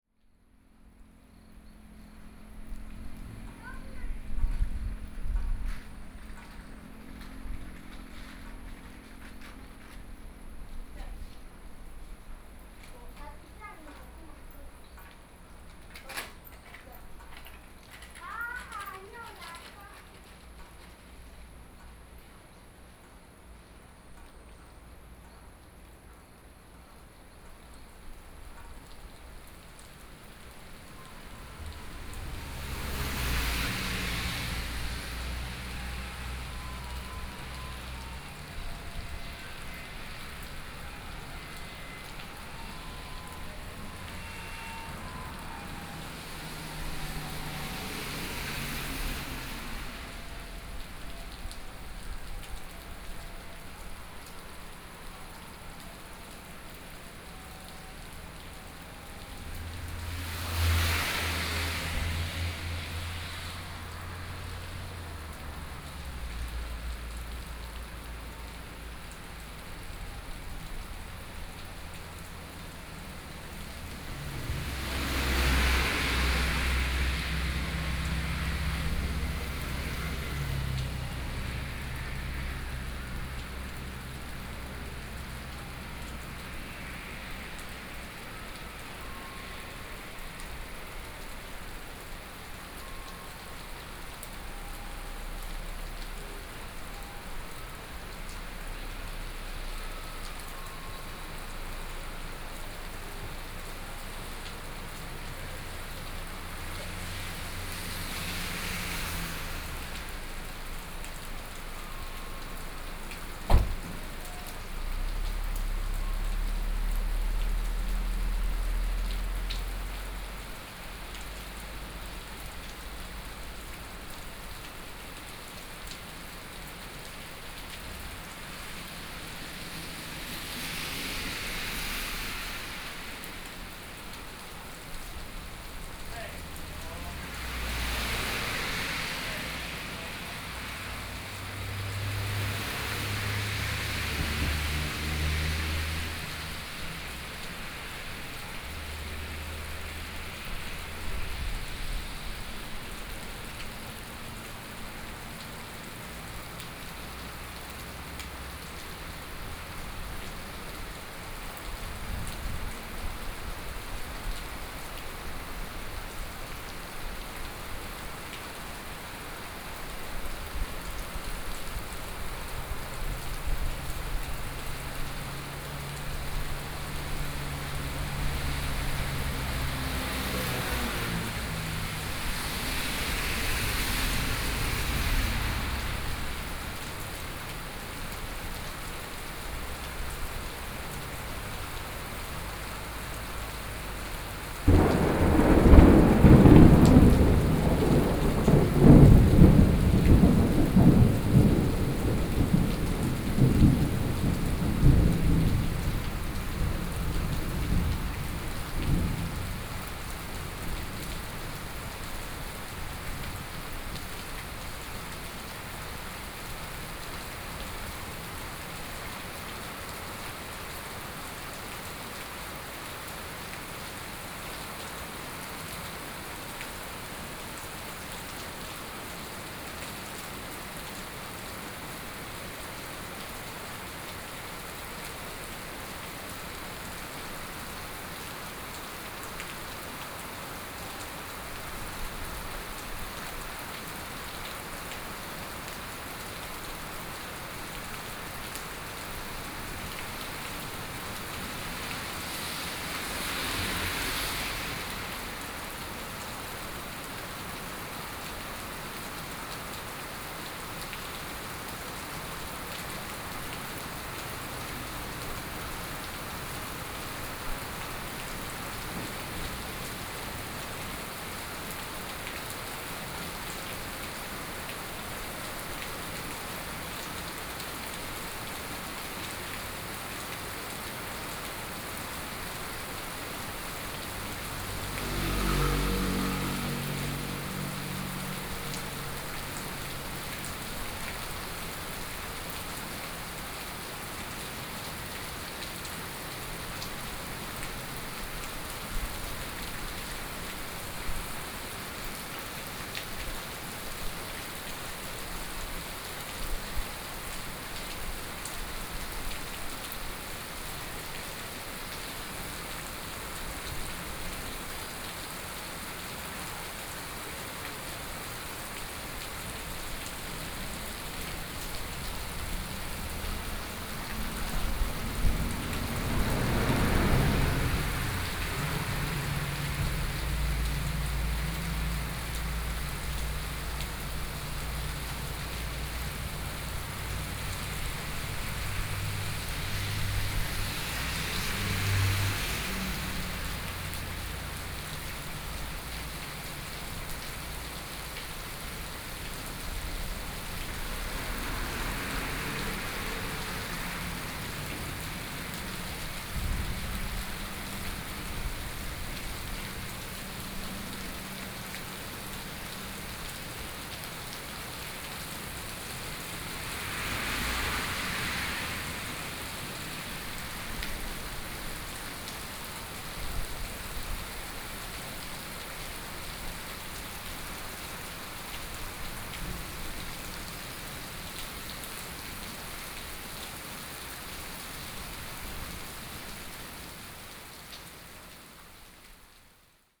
Sec., Yuanshan Rd., Datong Township - Small village
Rainy Day, Thunderstorm, Small village, Traffic Sound, At the roadside
Sony PCM D50+ Soundman OKM II
Datong Township, Yilan County, Taiwan